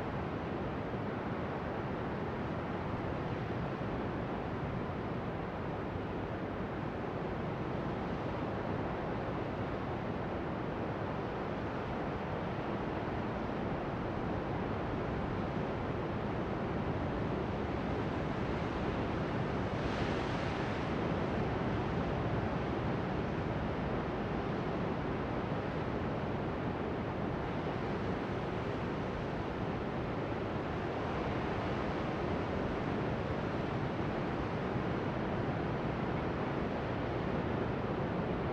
This is a recording from a mirador in the Área costera protegida Punta Curiñanco on a top cliff. Microphones are pointed towards the ocean. I used Sennheiser MS microphones (MKH8050 MKH30) and a Sound Devices 633.
Provincia de Valdivia, Región de Los Ríos, Chile, 24 August